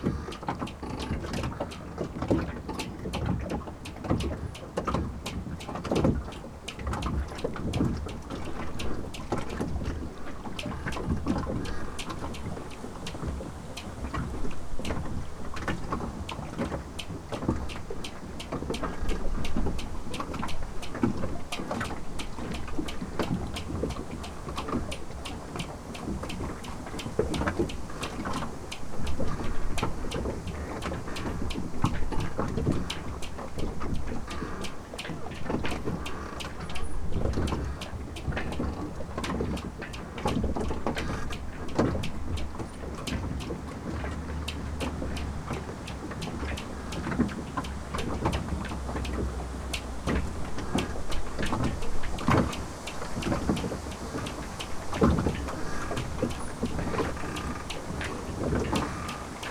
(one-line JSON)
{"title": "Lithuania, Paluse, on yacht's wharf", "date": "2012-09-09 16:20:00", "description": "squeaking pontoons and whipping ropes on a yacht", "latitude": "55.33", "longitude": "26.10", "altitude": "138", "timezone": "Europe/Vilnius"}